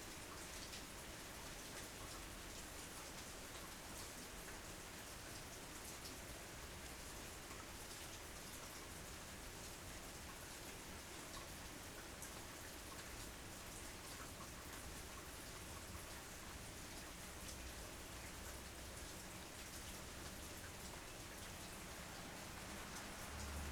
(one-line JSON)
{"title": "Luttons, UK - under the shed ... in a thunderstorm ...", "date": "2017-07-06 18:45:00", "description": "Under the shed .... in a thunderstorm ... recorded with Olympus LS 11 integral mics ... the swallows had fledged that morning and left the nest ... an approaching thunderstorm arrived ... lots spaces in the sounds ... both birds and thunderclaps ... bird calls from ... song thrush ... collared dove ... background noise and traffic ...", "latitude": "54.12", "longitude": "-0.54", "altitude": "76", "timezone": "Europe/London"}